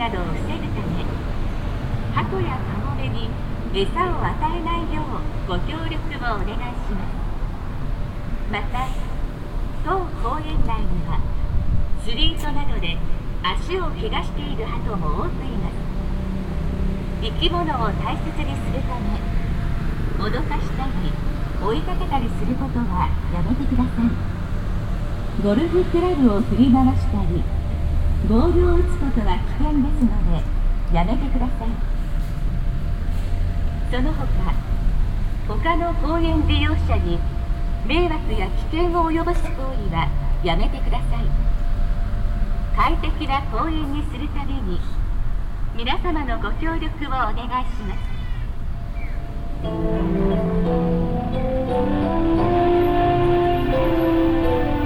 yokohama, harbour park, announcement
Inside the public harbour park in the late evening. An automatic loud speaker announcement finished by a distorted music melody. Unfortunately a little wind disturbance.
international city scapes - topographic field recordings and social ambiences